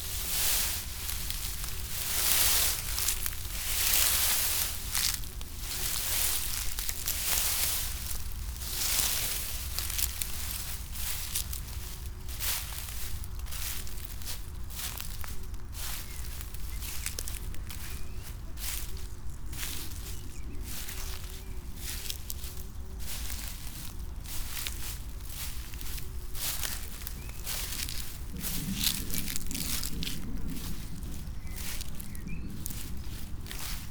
path of seasons, july meadow, piramida - tall grass, mown meadow, walking with naked feets